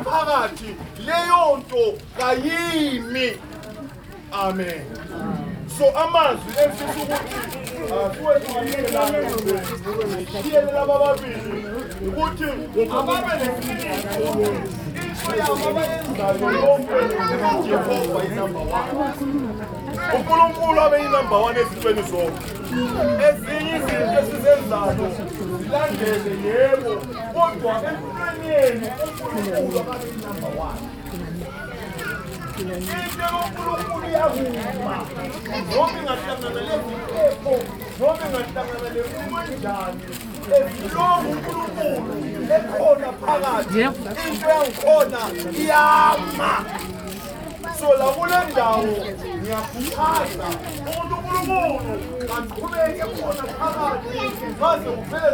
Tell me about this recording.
… a few minutes from a long speech by a local pastor…